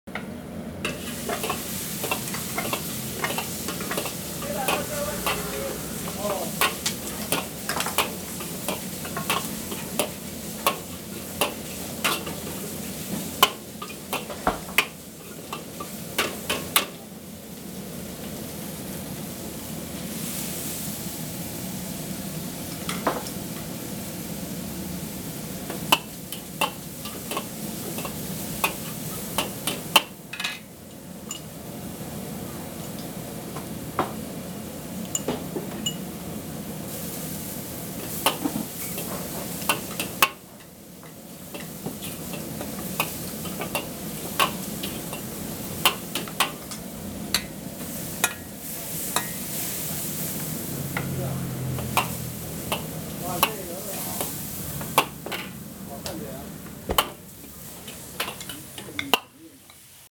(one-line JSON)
{"title": "A-JIANG eel noodle阿江鱔魚 - Stir frying sound", "date": "2014-03-23 17:18:00", "description": "The cook stir and fry the eel. 鱔魚意麵快炒聲", "latitude": "23.00", "longitude": "120.20", "altitude": "7", "timezone": "Asia/Taipei"}